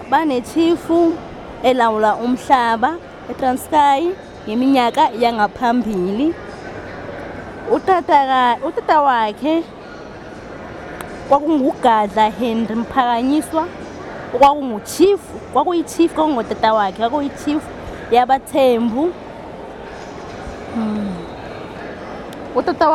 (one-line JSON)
{"title": "Park Station, Johannesburg, South Africa - my father gave me the name Rolihlahla…", "date": "2006-03-06 11:40:00", "description": "clipping from the original recordings for what became the radio piece LONG WALK abridged", "latitude": "-26.20", "longitude": "28.04", "altitude": "1749", "timezone": "Africa/Johannesburg"}